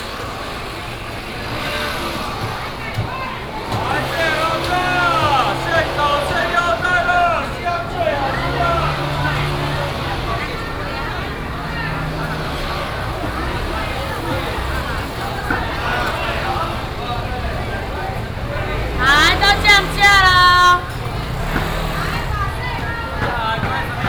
中山市場, Taiping Dist., Taichung City - noisy traditional market
Very noisy traditional market, traffic sound, vendors peddling, Binaural recordings, Sony PCM D100+ Soundman OKM II